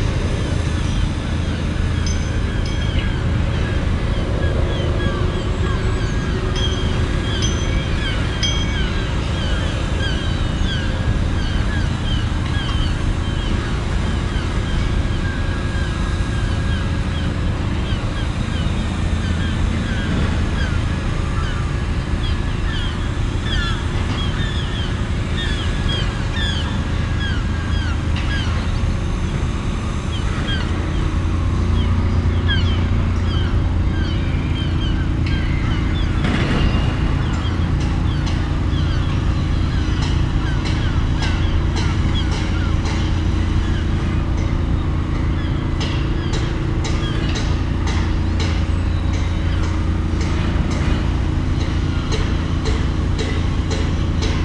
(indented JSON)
{
  "title": "Oliphant St, Poplar, London, UK - RHG #1",
  "date": "2018-01-11 15:10:00",
  "description": "Recorded with a pair of DPA 4060s and a Marantz PMD661.",
  "latitude": "51.51",
  "longitude": "-0.01",
  "altitude": "4",
  "timezone": "Europe/London"
}